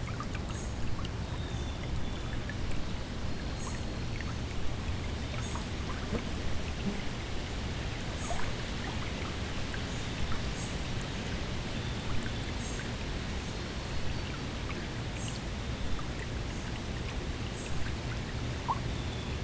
Taylor Creek Park, Toronto, ON, Canada - WLD 2020 Sounds from the creek
Recorded in the middle of Taylor-Massey Creek. Sounds of birds (mainly red-winged blackbirds), dogs, the creek, occasional passers-by on the recreational trail, susurration of leaves.